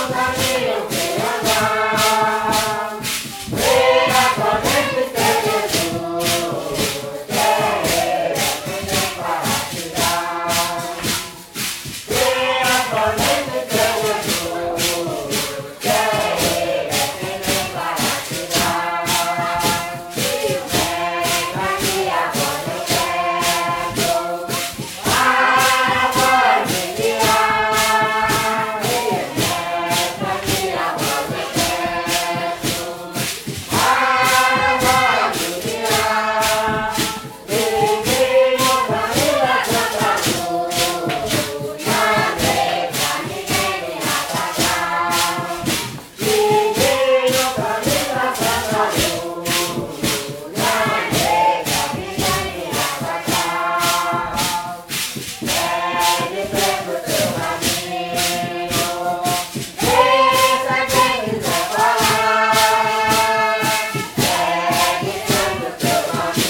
Mapia- Amazonas, Brazilië - santo daime - church
Santo Daime church is founded in the 1930's by Raimundo Irineu Serra aka mestre Irineu. He was a rubber tapper and at one time visited by the holy virgin Mary who instructed him to start this new religion which includes drinking of ayahuasca ('daime') and sing. During festival they are also dancing, from sunset to dawn.
Região Norte, Brasil, 6 July 1996